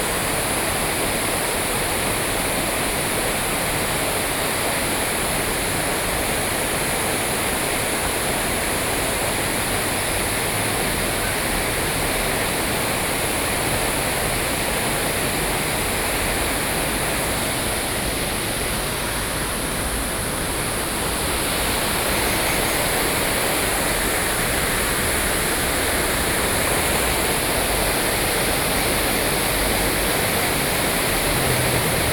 Sanxia District, New Taipei City - Stream